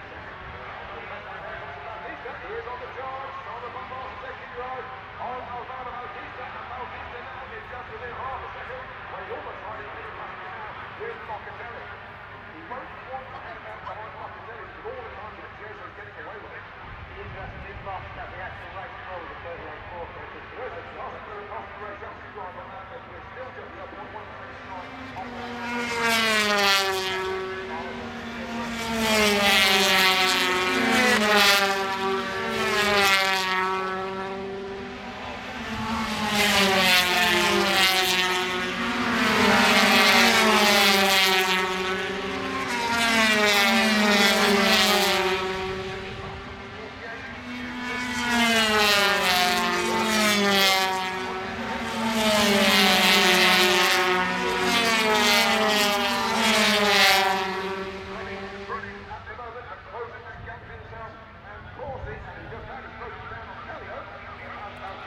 British Motorcycle Grand Prix 2004 ... 125 race ... part one ... one point stereo mic to minidisk ...
Derby, UK, 25 July 2004